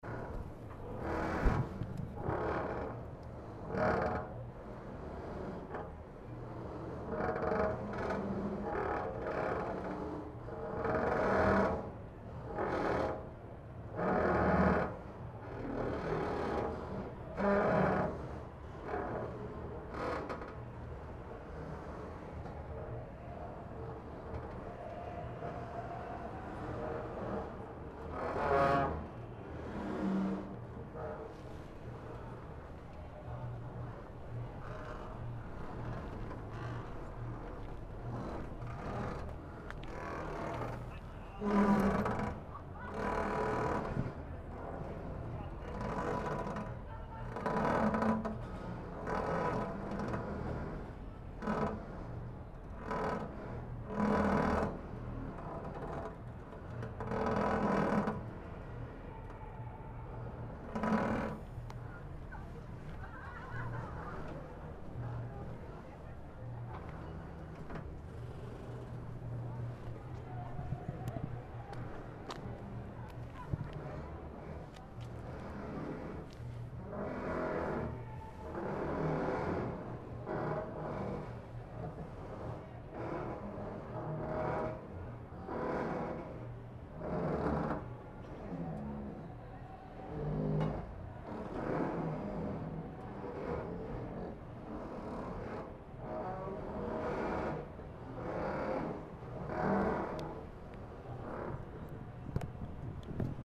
Hamburg New Harbour - Creaking hawser
Creaking hawser of a boat tied to a mole in the new harbour of Hamburg.